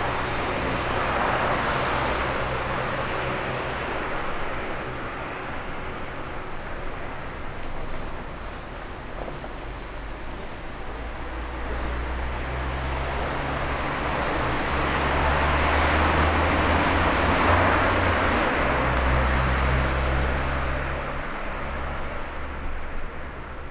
Olsztyn, Grunwaldzka-Jagiełły - City at summer night